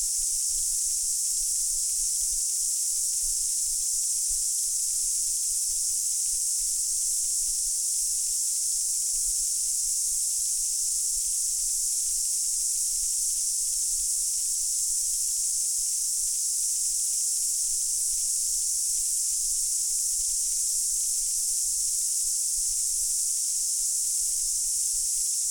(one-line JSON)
{"title": "Te Mata, Waikato, New Zealand - Cicadas in the Coromandel Forest Park", "date": "2021-01-26 16:14:00", "description": "Surrounded by cicadas between Te Mata and the Coromandel Forest Park.\nRecorded in stereo with two LOM Usi Pro.", "latitude": "-36.94", "longitude": "175.57", "altitude": "350", "timezone": "Pacific/Auckland"}